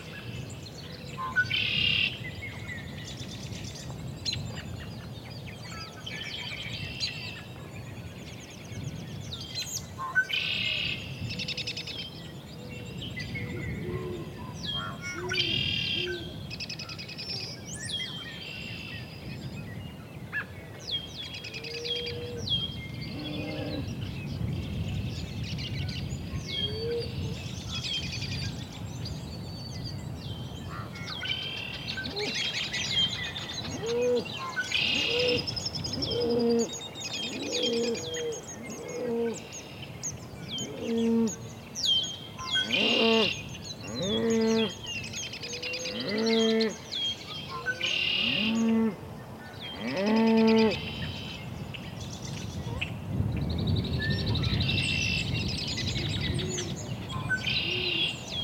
Nebraska, USA - Countryside in Nebraska, at the end of the day...
Birds singing, cows and bulls in a field in background. Recorded around a pound in the countryside of Nebraska (USA), at the end of the day. Sound recorded by a MS setup Schoeps CCM41+CCM8 Sound Devices 788T recorder with CL8 MS is encoded in STEREO Left-Right recorded in may 2013 in Nebraska, USA.
Imperial, NE, USA